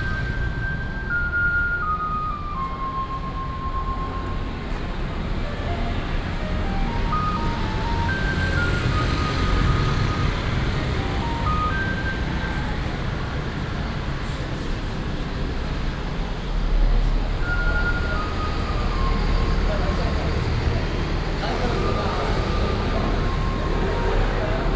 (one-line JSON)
{"title": "Dazhong St., Tamsui Dist., New Taipei City - Walking on the road", "date": "2016-04-07 17:51:00", "description": "Walking on the road, Traffic Sound, Garbage trucks, Go into the Sunset Market", "latitude": "25.18", "longitude": "121.45", "altitude": "46", "timezone": "Asia/Taipei"}